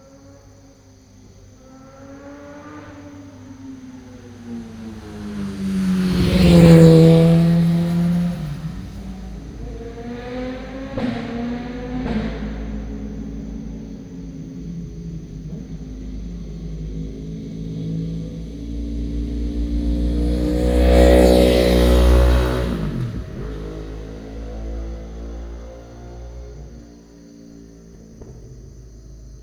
新庄隧道, Shitan Township - motorcycle
Near the tunnel entrance, Next to the road, Holiday early morning, Very heavy locomotives on this highway, Cicadas call, Binaural recordings, Sony PCM D100+ Soundman OKM II
Miaoli County, Taiwan, 24 September 2017